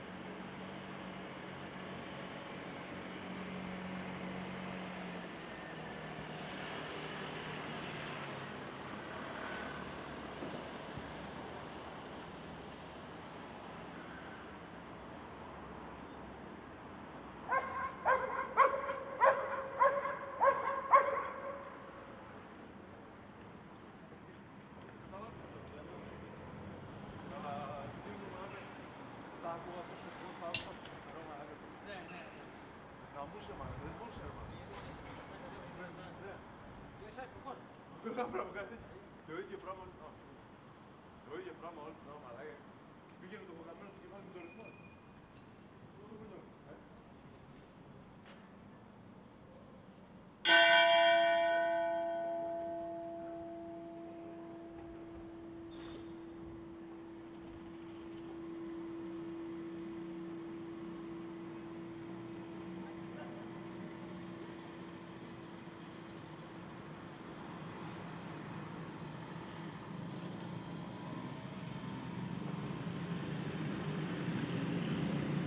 Recordings of the street at night from a 5th floor balcony. Mostly heard are a dog barking, a conversation between friends and the bell from a church.